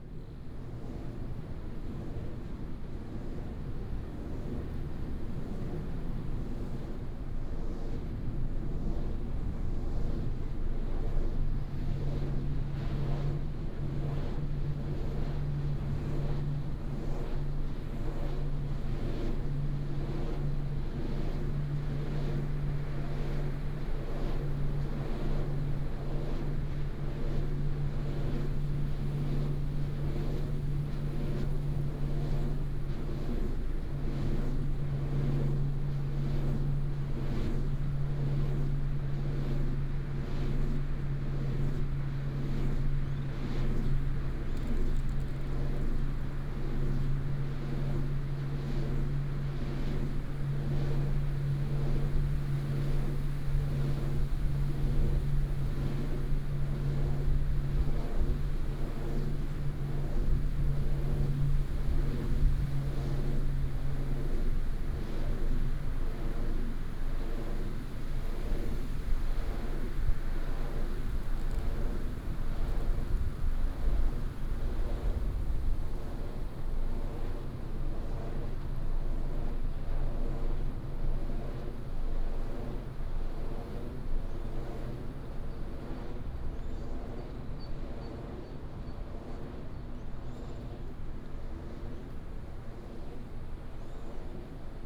Zhunan Township, Miaoli County - wind and Wind Turbines
Seaside bike lane, wind, Wind Turbines, Binaural recordings, Sony PCM D100+ Soundman OKM II
Zhunan Township, Miaoli County, Taiwan, 30 August 2017, ~12:00